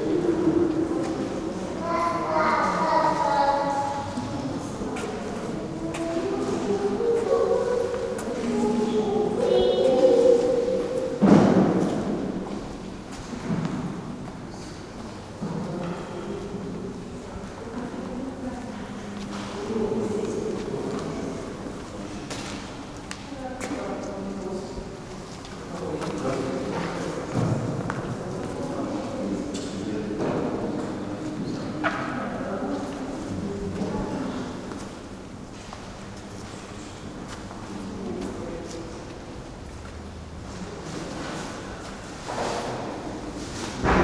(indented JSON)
{
  "date": "2011-05-22 16:14:00",
  "description": "Dzerginsk, Nikolo-Ugreshsky Monastery, inside Nikolsky Cathedral",
  "latitude": "55.62",
  "longitude": "37.84",
  "altitude": "127",
  "timezone": "Europe/Moscow"
}